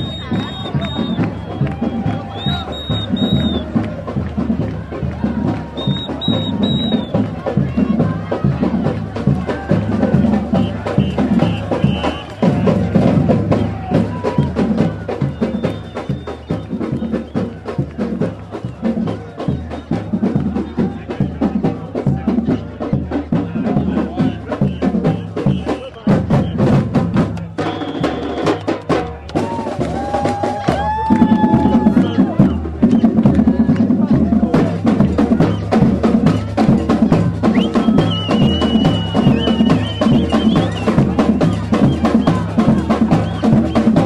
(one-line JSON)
{"title": "Montreal: Parc & Mont Royal (Parc Jeanne Mance) - Parc & Mont Royal (Parc Jeanne Mance)", "date": "2009-05-24 15:00:00", "description": "equipment used: Marantz\nQuebec independence march next to Parc Jeanne Mance, caught me by surprise when recording sounds at the park", "latitude": "45.53", "longitude": "-73.60", "altitude": "79", "timezone": "America/Montreal"}